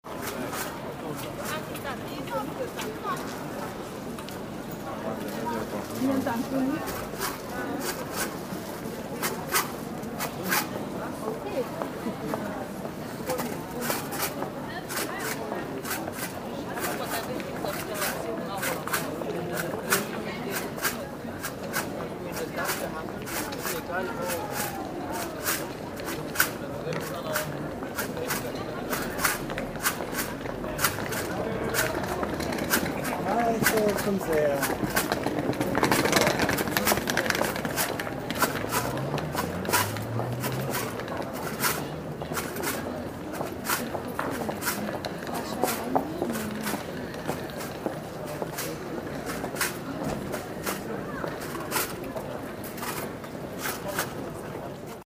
collection of money
guy collecting money for starving circus animals.
recorded nov 17th, 2008.